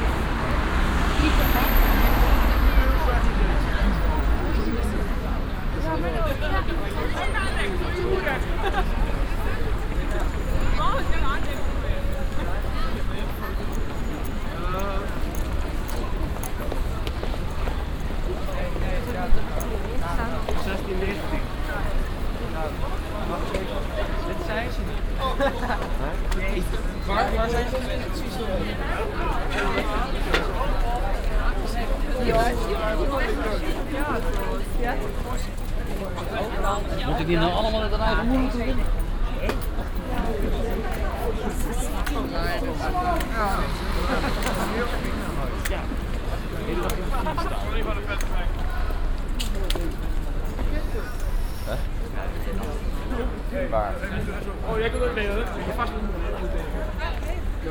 {"title": "cologne, komoedienstrasse, reisebusstop", "date": "2008-12-30 15:04:00", "description": "bushaltestelle für reisebusse, vorwiegend chinesische touristengruppe nach dombesichtigigung, pkws, schritte\nsoundmap nrw: social ambiences/ listen to the people - in & outdoor nearfield recordings", "latitude": "50.94", "longitude": "6.96", "altitude": "60", "timezone": "Europe/Berlin"}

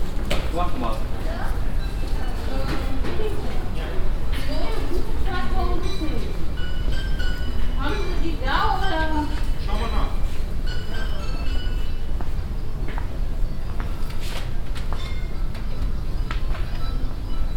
{"title": "dortmund, markt, inside ware house", "description": "inside a sport and game ware house - moving stairwase, steps and game sounds\nsoundmap nrw - social ambiences and topographic field recordings", "latitude": "51.51", "longitude": "7.47", "altitude": "94", "timezone": "Europe/Berlin"}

